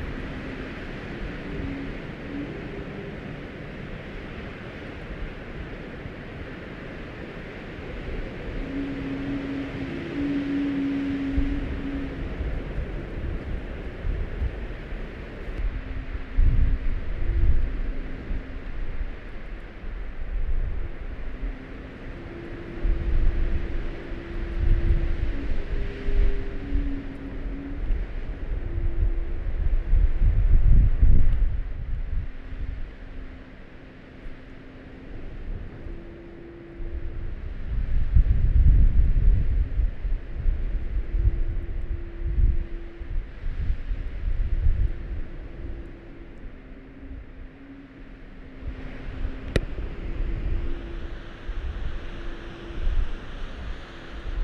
Biskupská kopa - wind ocarina on transmitter mast
Wonderful melodies made by wind playing on parabols of trasmitter mast
30 December 2012, 18:00